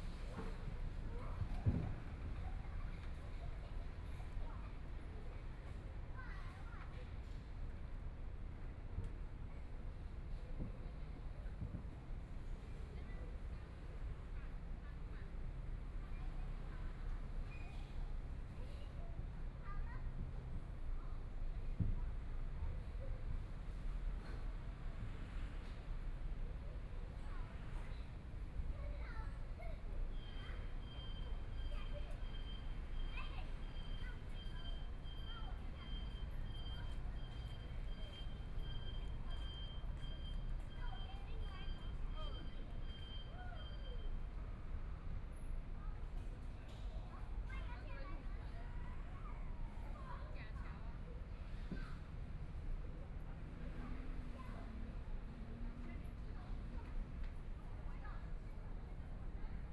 SiPing Park, Taipei - in the Park
Sitting in the park, In children's play area, Environmental sounds, Motorcycle sound, Traffic Sound, Binaural recordings, Zoom H4n+ Soundman OKM II
6 February, ~17:00